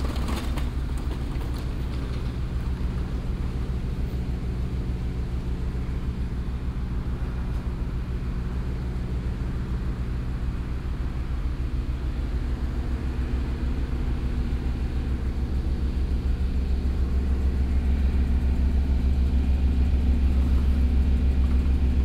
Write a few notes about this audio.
soundmap: köln/ nrw, kuehlwagen für getränke beim ausladen, brummen des generators, rollen, scheppern der kästen, passanten, morgens, project: social ambiences/ listen to the people - in & outdoor nearfield recordings, projekt klang raum garten